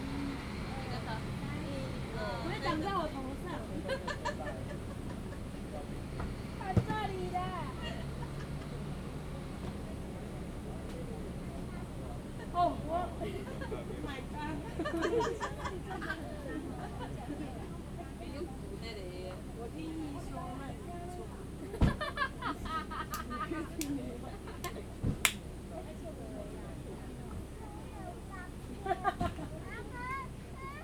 大坡池, Chihshang Township - under the trees
Many tourists under the trees, Very hot weather
Zoom H2n MS+ XY